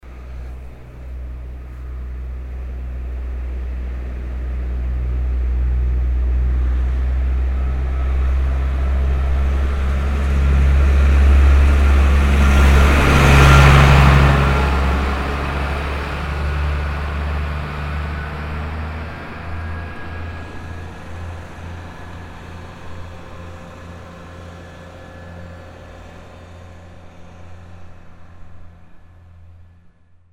{"title": "hupperdange, tractor", "date": "2011-09-13 17:29:00", "description": "A tractor driving uphill and passing by on Kaesfurterstrooss.\nHupperdange, Traktor\nEin Traktor fährt einen Hügel hinauf und fährt auf der Kaesfurterstrooss vorbei.\nHupperdange, tracteur\nUn tracteur gravit la colline et passe sur la Kaesfurterstrooss.", "latitude": "50.09", "longitude": "6.06", "altitude": "509", "timezone": "Europe/Luxembourg"}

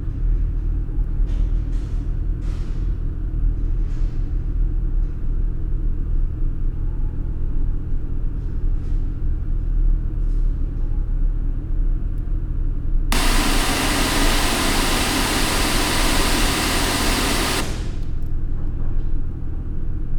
{"title": "SBG, Puigneró, antigua fábrica - Sótano, depósitos", "date": "2011-08-11 12:00:00", "description": "Ambiente en el sótano de la fábrica, en una zona ahora desocupada, donde aún se encuentran los depósitos de combustible y productos químicos utilizados por la antigua fábrica Puigneró.", "latitude": "41.98", "longitude": "2.18", "altitude": "878", "timezone": "Europe/Madrid"}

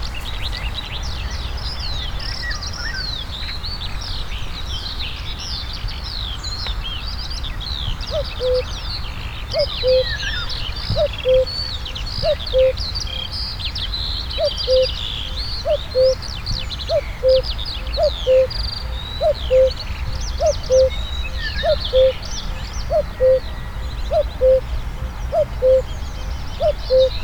{"title": "Morasko, Deszczowa road - cuckoo", "date": "2015-06-13 08:35:00", "description": "cuckoo singing in a birch tree", "latitude": "52.47", "longitude": "16.91", "altitude": "95", "timezone": "Europe/Warsaw"}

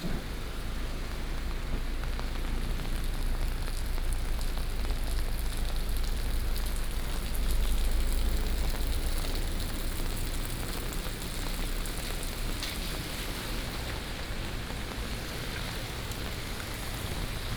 {"title": "Ln., Sec., Xinyi Rd., Da’an Dist., Taipei City - Walking in the rain", "date": "2015-07-23 14:17:00", "description": "Walking in the rain, walk into the convenience store", "latitude": "25.03", "longitude": "121.54", "altitude": "20", "timezone": "Asia/Taipei"}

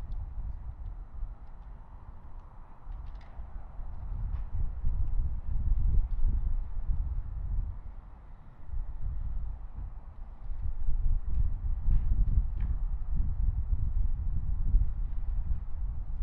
{"title": "N Cascade Ave, Colorado Springs, CO, USA - Wind in the Trees", "date": "2018-04-28 16:27:00", "description": "Northwest Corner of South Hall Quad. Dead Cat used. Set 18\" off the ground on the stone base of a lamp post pointed up at the tree branches.", "latitude": "38.85", "longitude": "-104.82", "altitude": "1846", "timezone": "America/Denver"}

